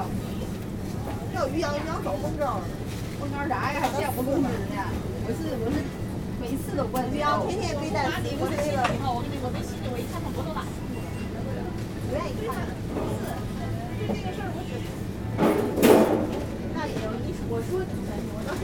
Ottignies-Louvain-la-Neuve, Belgique - In the supermarket
Quietly walking in the supermarket on a saturday afternoon. Japanese or chinese people prepairing sushis ans clients buying bottles.
Ottignies-Louvain-la-Neuve, Belgium, 3 December 2016